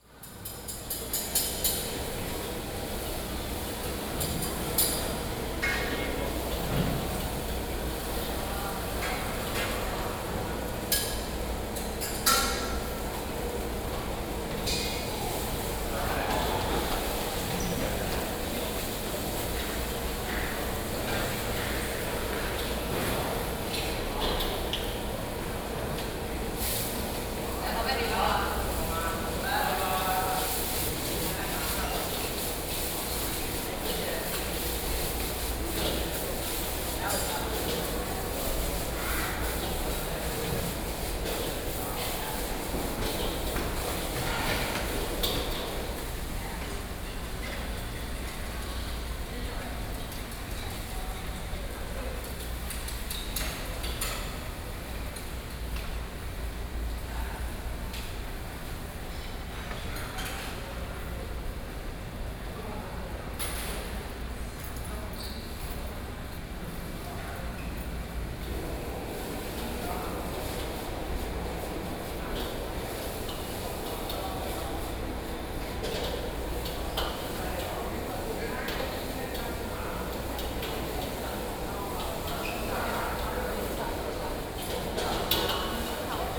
Ln., Aiguo E. Rd., Zhongzheng Dist., Taipei City - Cooking stall
Collection of residential floor plaza, Cooking stall, Sony PCM D50 + Soundman OKM II
台北市 (Taipei City), 中華民國